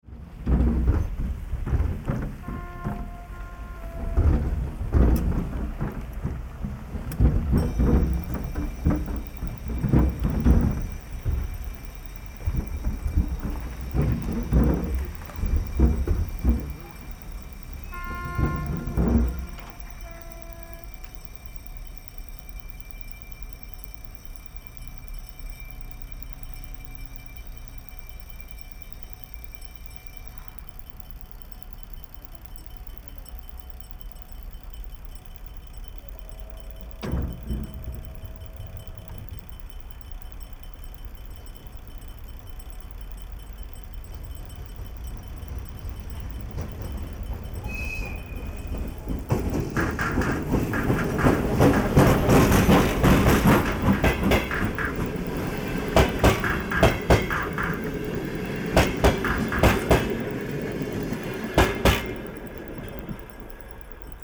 Severodvinsk, Russia - railway crossing
Railway crossing.
Железнодорожный переезд, предупреждающий сигнал и проезд поезда.
Severodvinsk, Arkhangelsk Oblast, Russia, 6 January 2013, ~16:00